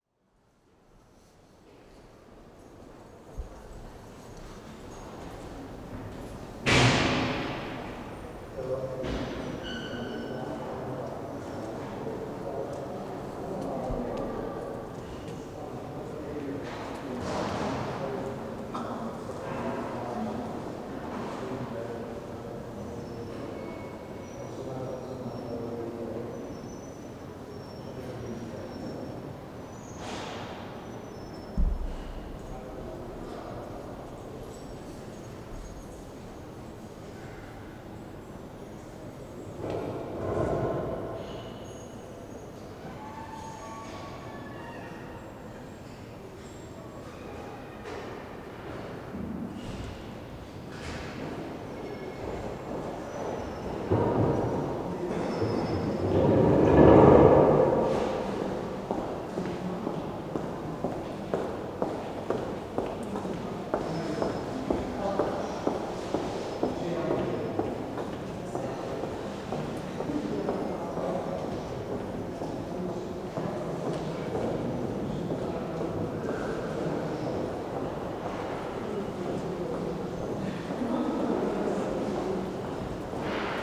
Poštovská, Brno-střed-Brno-město, Česko - Alfa Passage
Recorded on Zoom H4n + Rode NTG, 26.10.2015.